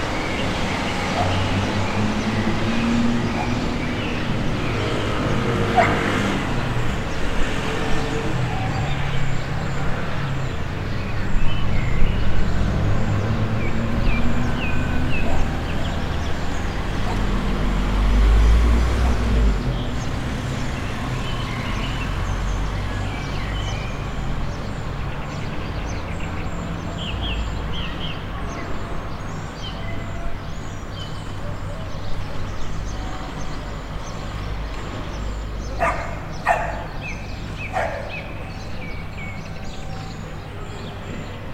Ijentea Kalea, BAJO, Donostia, Gipuzkoa, Espagne - Outside the baker's
Outside the baker's
Captation ZOOM H6